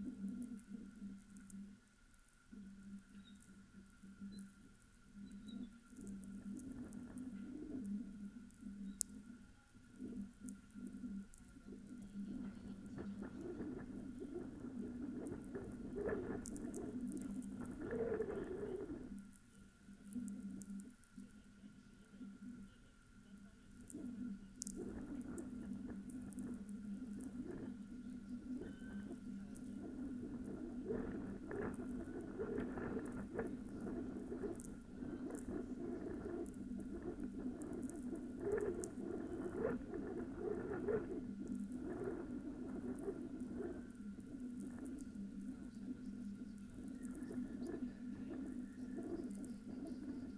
Latvia, Naujene, watchrower's grounding cable
contact microphones on watchtower's grounding cable...some hum, some wind and radio (again)
13 October 2012, 15:10